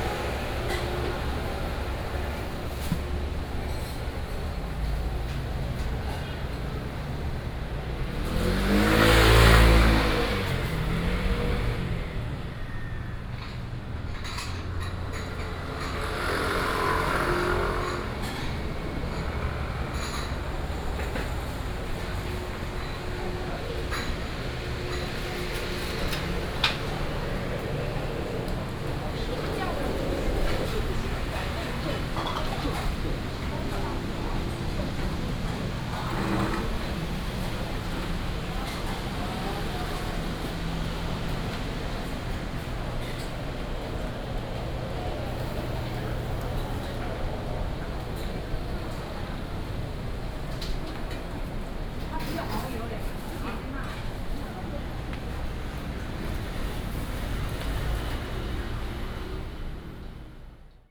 Traffic Sound, A variety of small restaurants, Walking along beside the Traditional Market
Taipei City, Taiwan